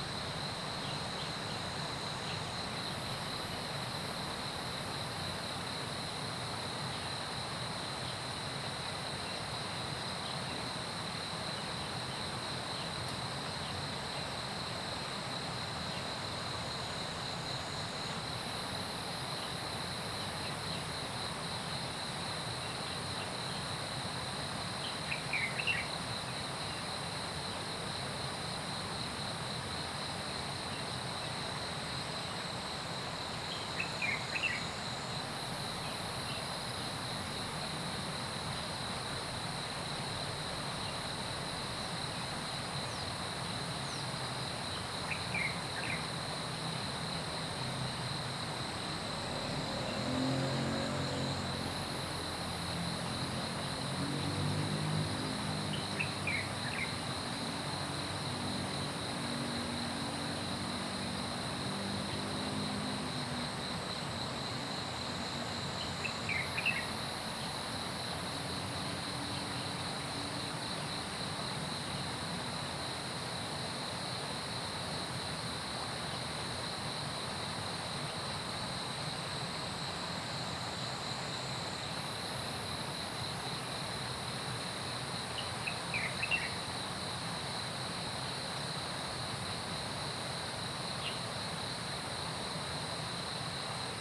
水上巷, 桃米里, Nantou County - Standing on the bridge
Early morning, Bird sounds, Insect sounds, Stream gathering place, Chicken sounds
Zoom H2n MS+XY
Puli Township, 水上巷, 8 June